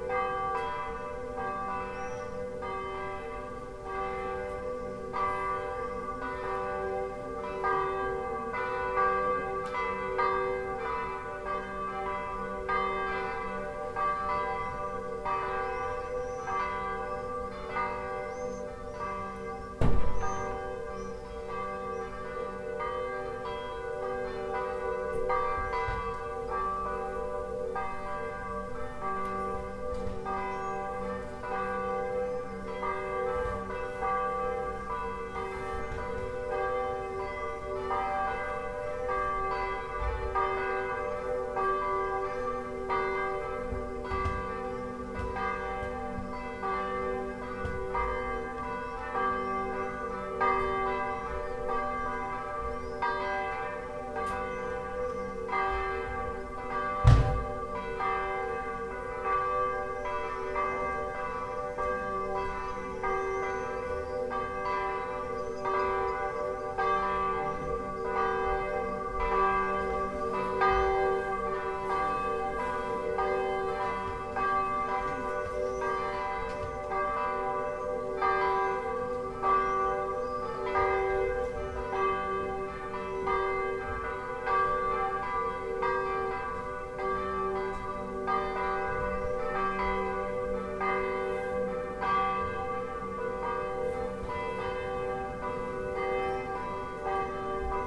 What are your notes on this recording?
Just as we arrived in our place at Antibes, all the bells in the locale started to ring. It was just after four, a Saturday afternoon. I was unpacking my stuff, and Mark's boys were doing the same downstairs. I set up the EDIROL R-09 beside an open window, so as to capture a little snippet of the lovely bells. You can just about hear us talking in the background, my suitcase zip, and the swifts (or perhaps swallows, I'm not sure?) circling in the air after the bells have stopped ringing and the sound has completely died away.